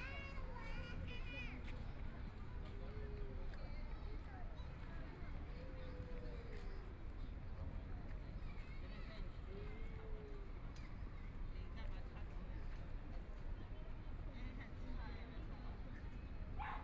MingShui Park, Taipei City - Sitting in the park
Sitting in the park, Traffic Sound, Community-based park, Kids game sounds, Birds singing, Environmental noise generated by distant airport, Binaural recordings, Zoom H4n+ Soundman OKM II